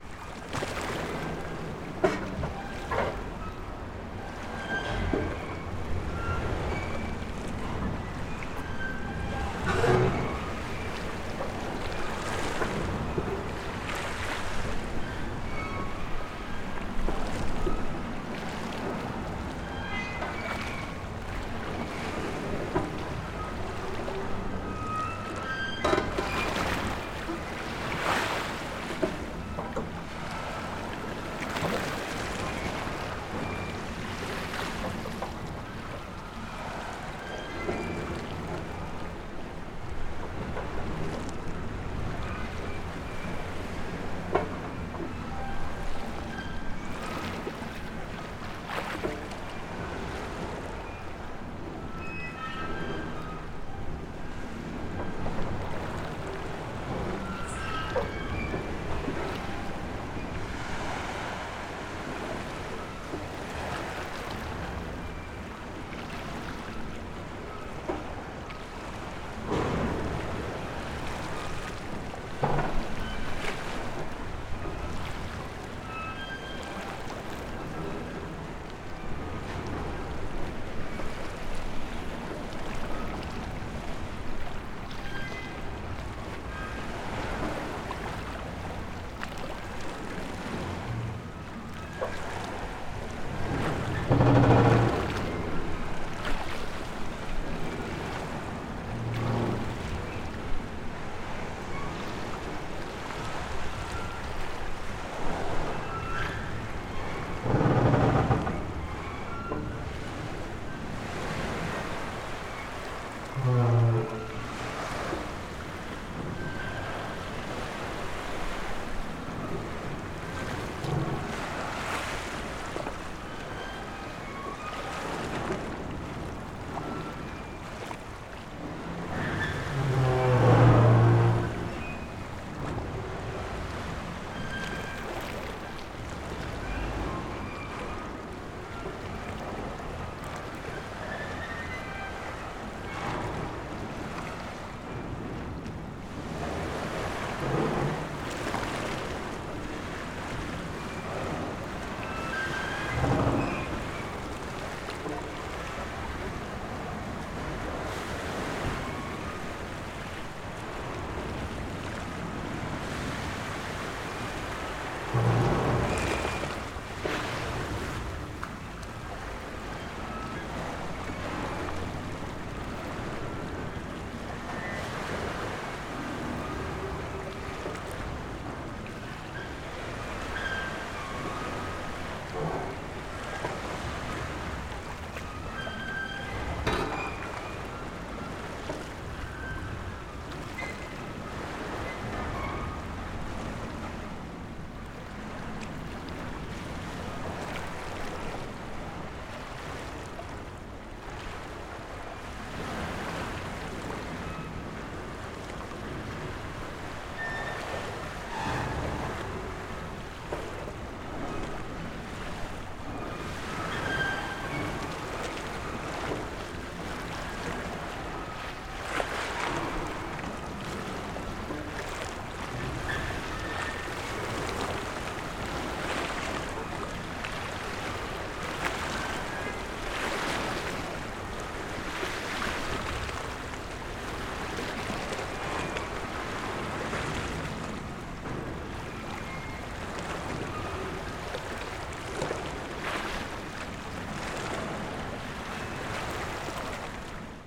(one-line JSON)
{"title": "Lisbon, Portugal - waves with metallic harbour", "date": "2017-03-06 22:04:00", "description": "Waves crashing against the shore with a mettalic harbour creeaking in the background. Tejo river, Lisbon. Recorded with the onboard XY mic of a Zoom H6.", "latitude": "38.71", "longitude": "-9.14", "altitude": "1", "timezone": "Europe/Lisbon"}